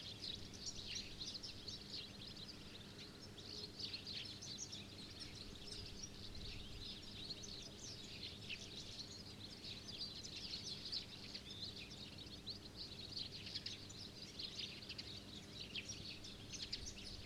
village's soundscape and humming electrical substation